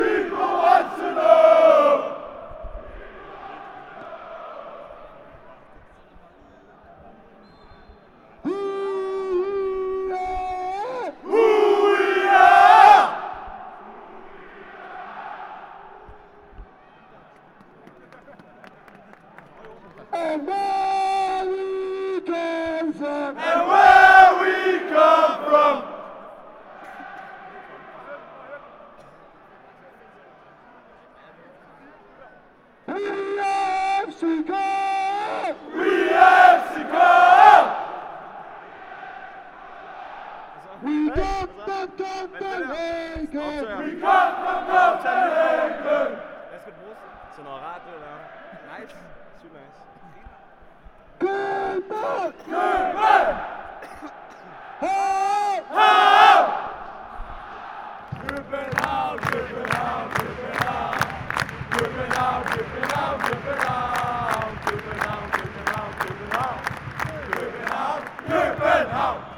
Ultras from football club FC Copenhagen supports their team in a 5-1 defeat against FC Midtjylland